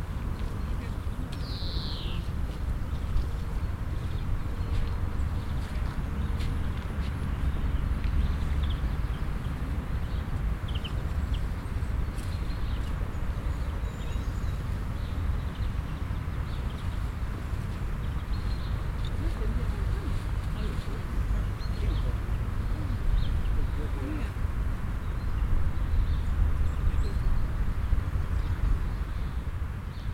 mettmann, friedhof lindenheide
friedhof, nachmittags, vogelstimmen, leichter wind, gespräche von grabbesuchern, im hintergrund strassenverkehr
A graveyard in the early afternoon, birds, a mellow wind, conversation of passing bye, surviving dependants. In the distance the sound of traffic
project:resonanzen - neanderland - soundmap nrw
project: social ambiences/ listen to the people - in & outdoor nearfield recordings
16 June 2008